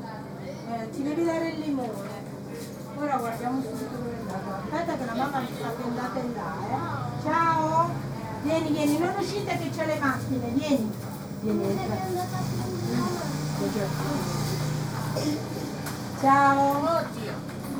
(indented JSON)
{
  "title": "Via Felice Cavallotti, Massa MS, Italia - La bottega",
  "date": "2017-08-10 11:52:00",
  "description": "Una bambina ha bisogno di qualcosa per le punture delle zanzare.",
  "latitude": "44.04",
  "longitude": "10.14",
  "altitude": "64",
  "timezone": "Europe/Rome"
}